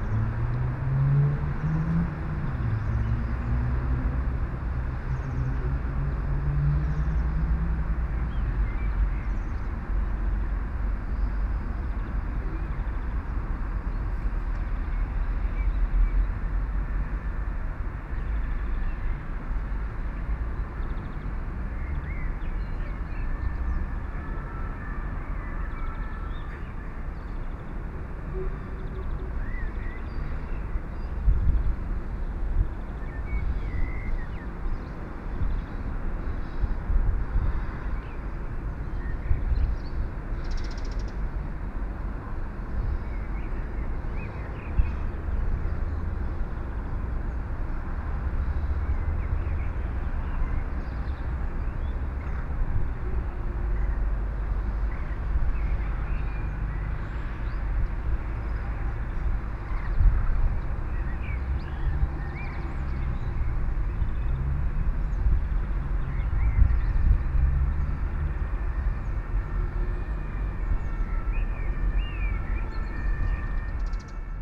Hochwaldstraße, 12:30 Uhr, PCM Rekorder
11 May 2013, 12:30